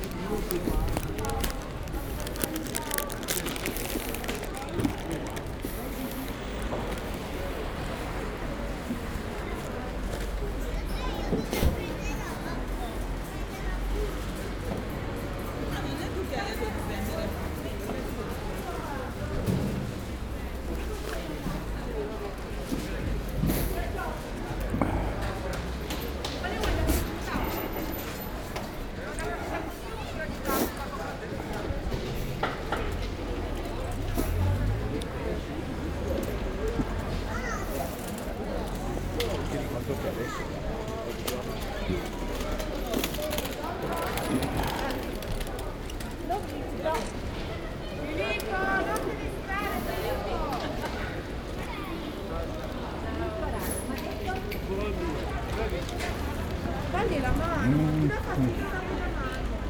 Ascolto il tuo cuore, città. I listen to your heart, city. Several chapters **SCROLL DOWN FOR ALL RECORDINGS** - “Crunchy Saturday market with conversation in the time of covid19”: Soundwalk
“Crunchy Saturday market with conversation in the time of covid19”: Soundwalk
Chapter CLXXXVII of Ascolto il tuo cuore, città. I listen to your heart, city.
Saturday, February 5th, 2022. Walk in the open-door square market at Piazza Madama Cristina, district of San Salvario, Turin, almost two years after the first emergency disposition due to the epidemic of COVID19.
Start at 11:56 a.m., end at h. 00:38 p.m. duration of recording 41’36”
The entire path is associated with a synchronized GPS track recorded in the (kml, gpx, kmz) files downloadable here:
Piemonte, Italia, 5 February 2022